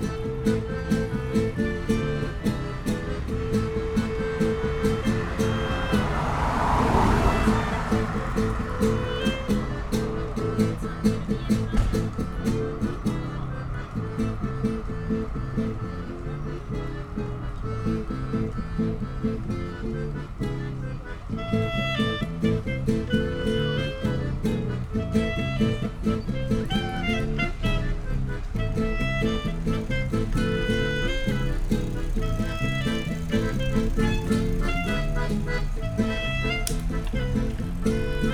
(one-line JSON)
{"title": "Weigandufer, Neukölln, Berlin - musicians practising", "date": "2013-07-24 20:35:00", "description": "Weigandufer / Roseggerstr., Berlin Neukoelln, musicians practising on the sidewalk, summer evening ambience\n(Sony PCM D50, DPA4060)", "latitude": "52.48", "longitude": "13.45", "altitude": "35", "timezone": "Europe/Berlin"}